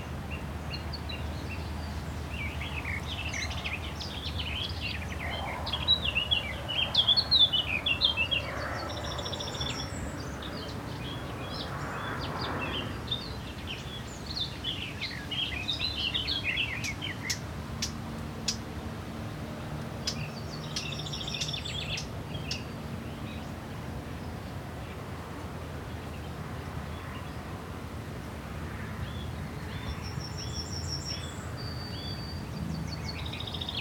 Münsing, Deutschland - Near Münsing - birds in a grove, distant traffic
Near Münsing - birds in a grove, distant traffic. [I used the Hi-MD recorder Sony MZ-NH900 with external microphone Beyerdynamic MCE 82]
Germany, 9 May 2011, 19:25